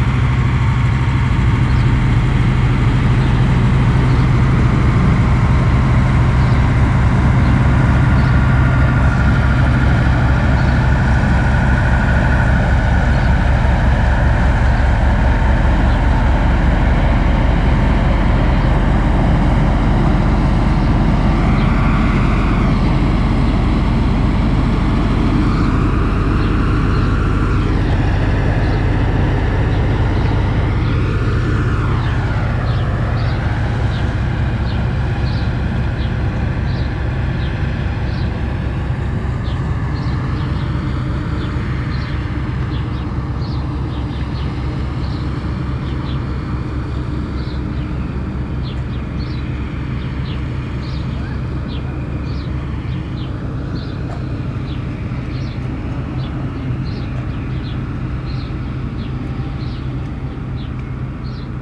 An der Anlegestation Hügel der Weissen Flotte. Der Klang eines Flugzeugüberflugs über den see, dann die Ankunft eines Boots, Passagiere, die das Boot besteigen und die Abfahrt des Bootes.
At the landing station Hügel of the white fleet. The sound of a plane crossing the lake, the arrival of a boat, passengers talking and entering the boat and the departure of the boat.
Projekt - Stadtklang//: Hörorte - topographic field recordings and social ambiences